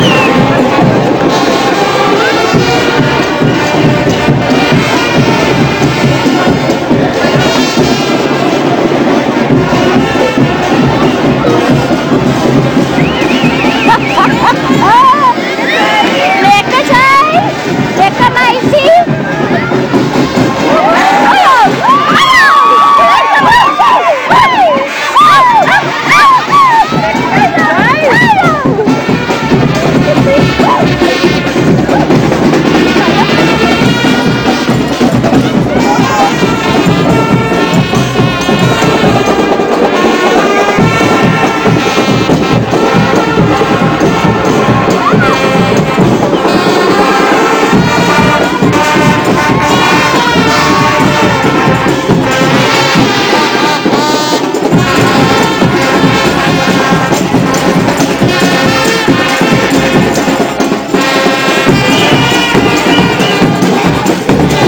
Cape Town, new Year Parade
‘Kaapse klopse’ or Cape Town Minstrel Carnival takes place on 2 January every year. The origin of the carnival stretches back to the 19th century and has its origins in the time of slavery in Cape Town, when the original citizens of District Six were allowed their one day off for New years day.
The event, which has developed a distinct Cape flavour, is also supposed to have ties to the minstrel entertainers who stopped off in Cape Town on huge American ocean liners, over 100 years ago.
Over ten thousand costumed, banjo-picking musicians and dancers, their faces painted white, as opposed to the original black-painted faces of the visiting minstrels, parade and march through the streets, followed by a series of dance, singing and costume competitions at Green Point Stadium.
Cape Town, South Africa